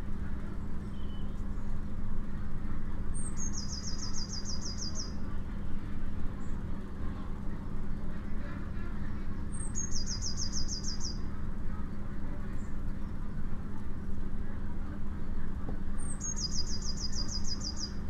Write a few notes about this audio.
Fen Lane is a narrow corridor offered to wildlife running tight between the sterile silence of regimented, commercial orchards, and the putrid smell of an industrial poultry unit. Poultry can be heard incessantly over their heated, ventilated housing. In stark contrast, wild birds sing freely among the abandoned hazel coppice and large ivy-clad willow and oaks of the lane.